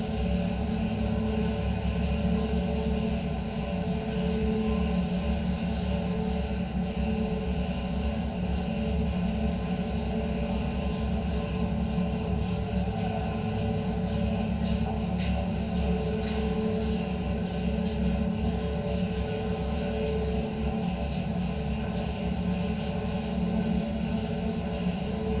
contact mic on Metro entry railing, Istanbul
escalators provide a constant drone that resonates in a railing at the entry to the Metro
20 February, 13:11